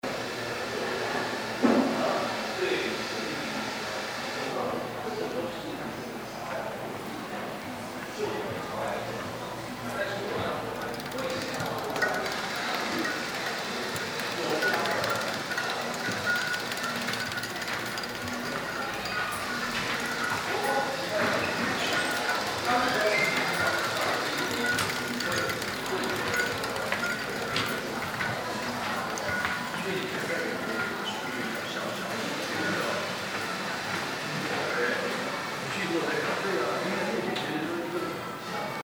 Eslite Dun-Nan B2 Art Space
record at 02 August.2008, 7pm.
An art exhibitions call "very Fun Park".
Daan District, Taipei City, Taiwan